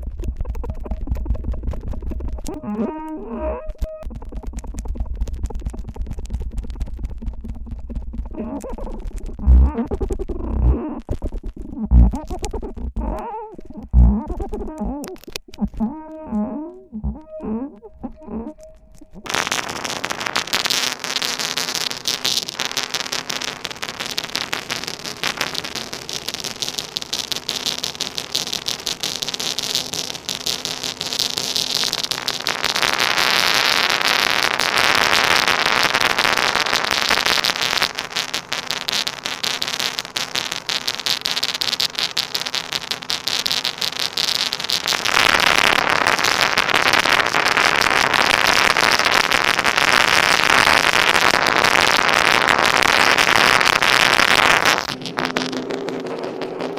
Teufelsberg, On top of his wine glass - On top of his wine glass
Wine glasses should never be filled more than half-way..
(Wine glasses, Dusan, Luisa, Me, contact microphones borrowed from John)
Germany, 5 February 2010